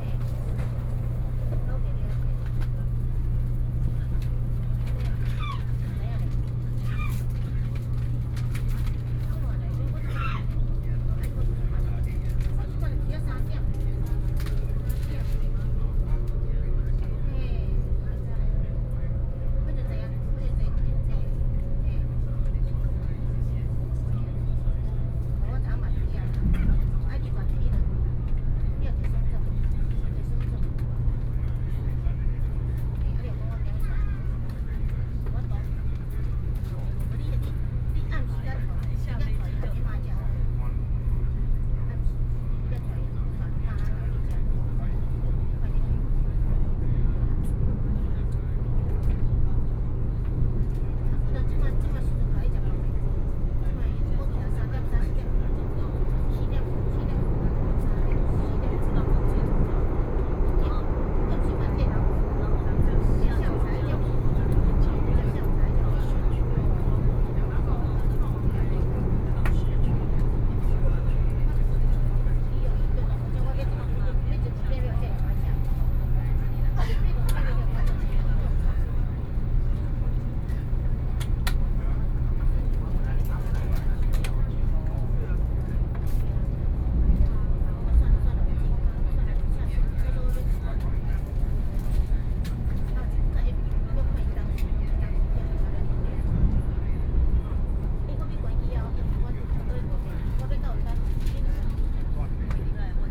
{
  "title": "Taiwan High Speed Rail - In the compartment",
  "date": "2013-07-26 14:06:00",
  "description": "Taiwan High Speed Rail, In the compartment, Sony PCM D50 + Soundman OKM II",
  "latitude": "25.03",
  "longitude": "121.49",
  "altitude": "1",
  "timezone": "Asia/Taipei"
}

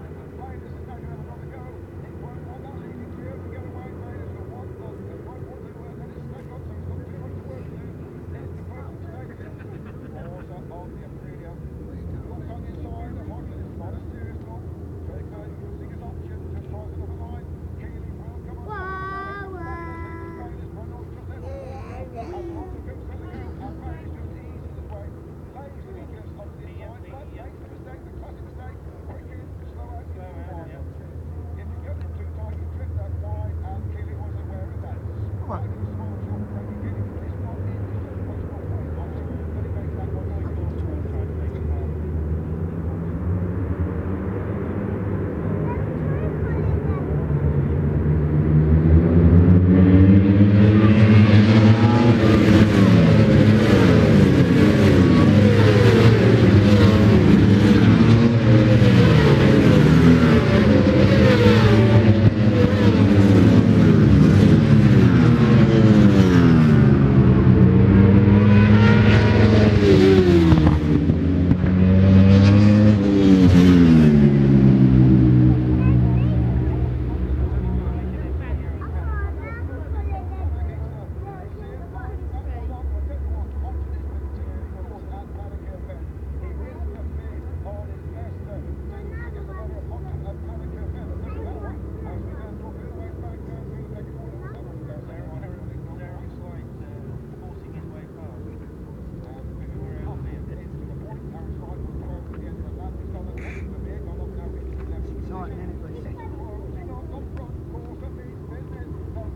{
  "title": "West Kingsdown, UK - World Superbikes 2000 ... race two",
  "date": "2000-10-15 15:30:00",
  "description": "World Superbikes 2000 ... race two ... one point stereo mic to minidisk ...",
  "latitude": "51.35",
  "longitude": "0.26",
  "altitude": "152",
  "timezone": "GMT+1"
}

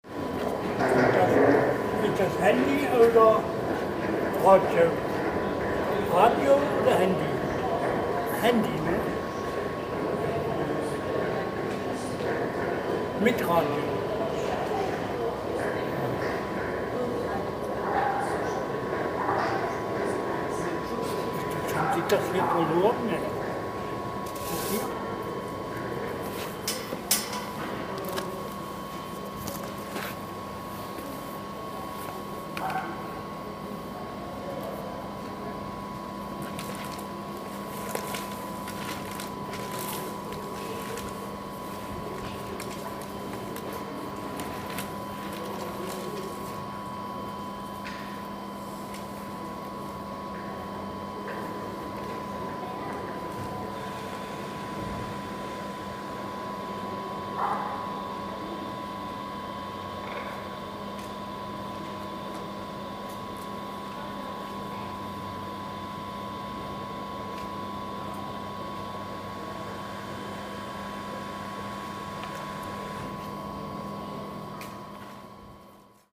{
  "title": "handy oder radio?",
  "description": "sunday morning, freiberg station, a curious old man asking questions concerning my recording device.\nrecorded apr 26th, 2009.",
  "latitude": "50.91",
  "longitude": "13.34",
  "altitude": "413",
  "timezone": "GMT+1"
}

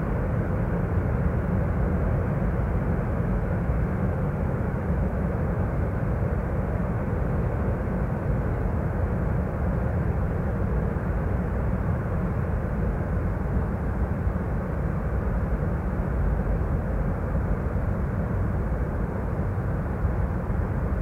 {
  "title": "Würzburg, Deutschland - Bombenangriff Glockenläuten zum 16.3.1945",
  "date": "2013-03-16 21:20:00",
  "description": "26 min binaural recording Glockenläuten der Stadt.",
  "latitude": "49.81",
  "longitude": "9.95",
  "altitude": "264",
  "timezone": "Europe/Berlin"
}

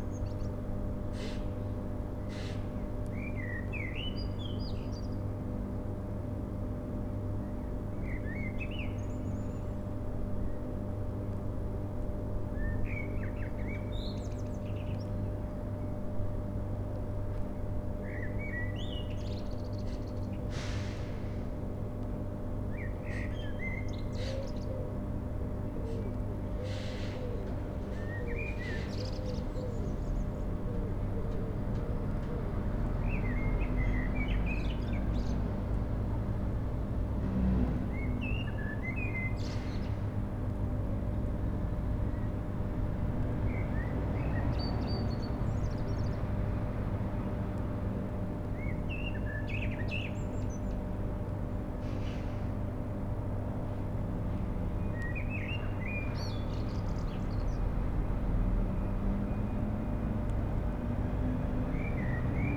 {
  "title": "Niederaußem, powerplant - transformer station",
  "date": "2011-05-25 19:55:00",
  "description": "hum and buzz of transformer station at powerplant Niederaußem near Cologne",
  "latitude": "50.99",
  "longitude": "6.66",
  "altitude": "86",
  "timezone": "Europe/Berlin"
}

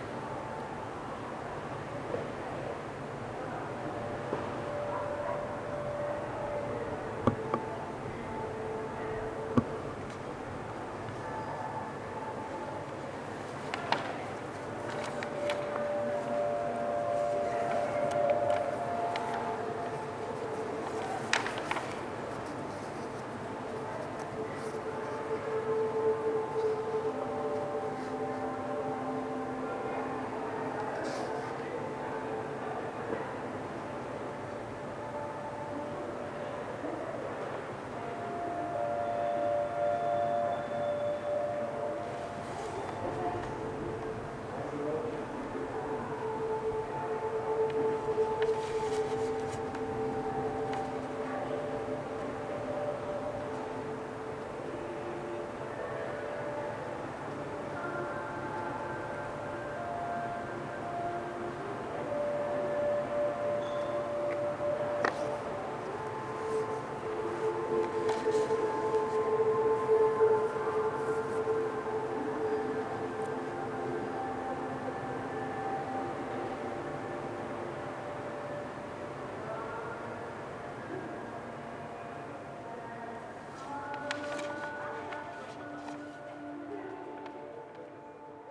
{
  "title": "Grote Markt, Leuven, Belgien - Leuven - Stadthuis - backyard -sound instalation",
  "date": "2022-04-23 14:00:00",
  "description": "At the backyard of the historical Stdthuis - the sound of a sound installation by Liew Niyomkarn entitled \"we will echo time until the end of it\" - part of the sound art festival Hear/ Here in Leuven (B).\ninternational sound scapes & art sounds collecion",
  "latitude": "50.88",
  "longitude": "4.70",
  "altitude": "33",
  "timezone": "Europe/Brussels"
}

